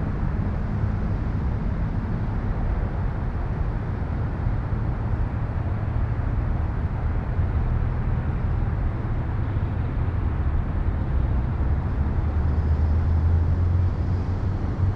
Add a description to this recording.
This was recorded at the corner of Cedar Creek Park at Ott st and Hamilton st. It was recorded at night with a Sony recorder.